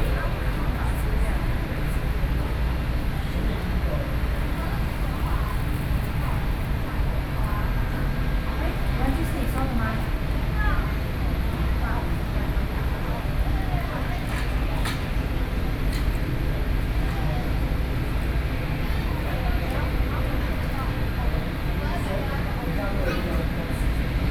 Taipei Songshan Airport (TSA), Taiwan - Airport
Taipei City, Taiwan, November 2012